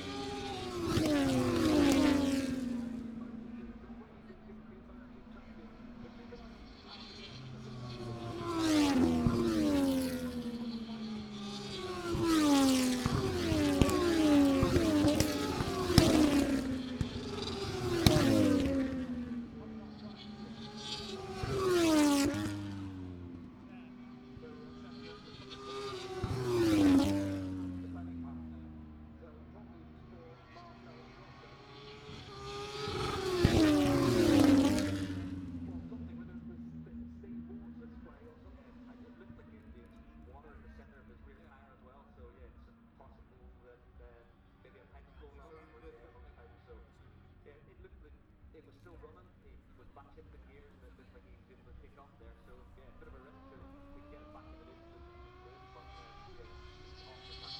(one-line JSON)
{"title": "Silverstone Circuit, Towcester, UK - british motorcycle grand prix 2021 ... moto two ...", "date": "2021-08-27 10:55:00", "description": "moto two free practice one ... maggotts ... dpa 4060s to Zoom H5 ...", "latitude": "52.07", "longitude": "-1.01", "altitude": "158", "timezone": "Europe/London"}